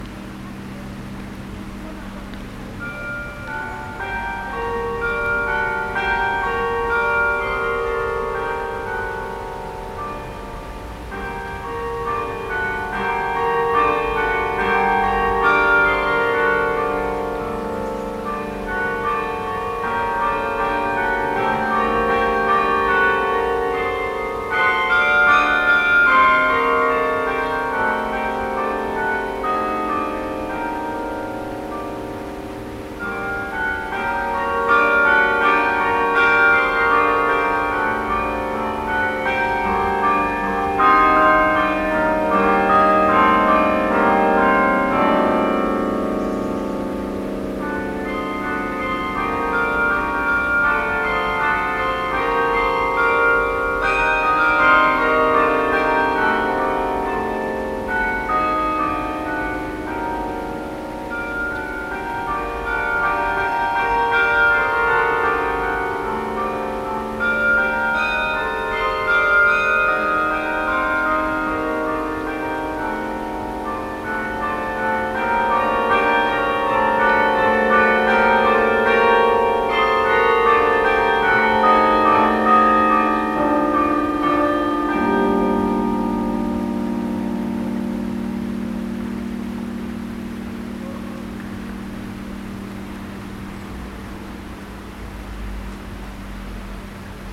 Place du Château, Chambéry, France - Carillon Chambéry
Le carillon de Chambéry depuis la place du château et ses jets d'eau. Jean-Pierre Vittot au clavier.
28 September 2021, ~11:00